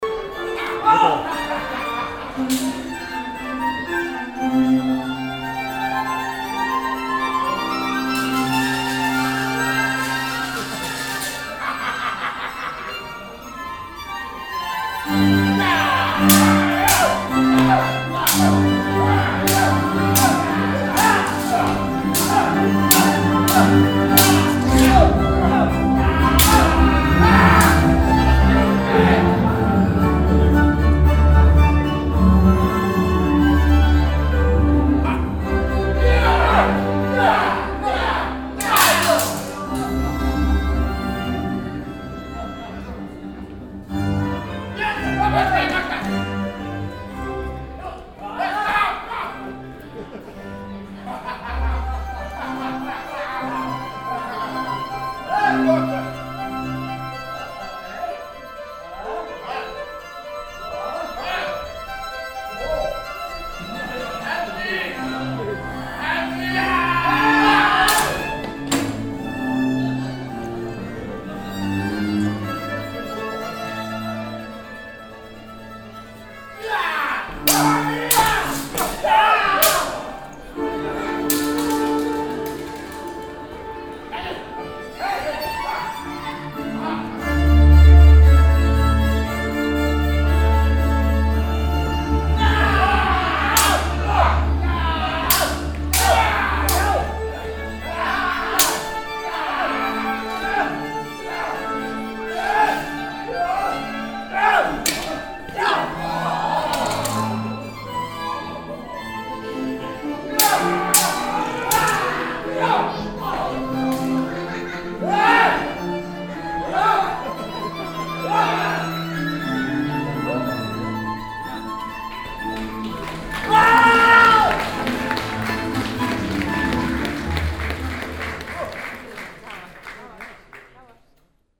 vianden, castle, knight fight
A staged knight fight with renaissance background music in the castles gallery.
The sound of the music accompanied by the actors screams and the sound of the epee plus some audience reactions.
Vianden, Schloss, Ritterkampf
Eine Aufführung von einem Ritterkampf mit Renaissance-Musik im Hintergrund in der Schlossgalerie. Die Musik wird begleitet von den Schreien der Schauspieler und den Geräuschen der Degen sowie einigen Reaktionen aus dem Publikum.
Vianden, château, combat de chevaliers
Un combat de chevaliers reconstitué sur la galerie du château et sur fond de musique de la Renaissance.
On entend la musique accompagnée des cris des acteurs, du bruit des épées et de certaines réactions dans l’assistance.
Project - Klangraum Our - topographic field recordings, sound objects and social ambiences